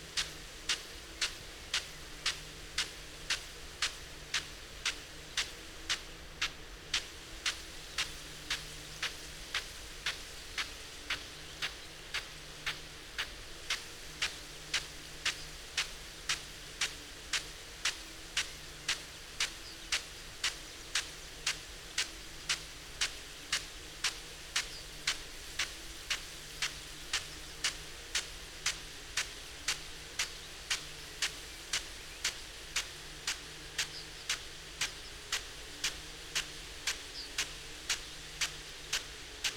field irrigation system ... parabolic ... Bauer SR 140 ultra sprinkler to Bauer Rainstart E irrigation unit ... bless ...
England, United Kingdom, 20 May, 07:00